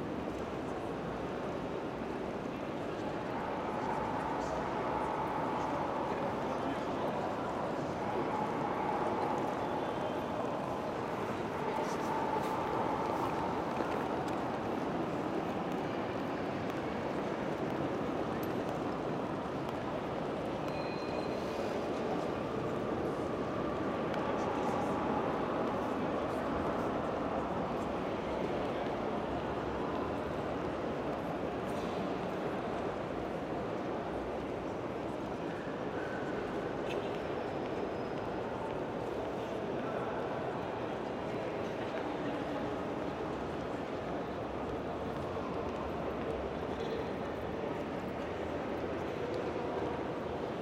Zürich, Hauptbahnhof, Schweiz - Bahnhofshalle
Glockenschlag. Am Schluss ein Männerchor.
15 June 1998, 08:58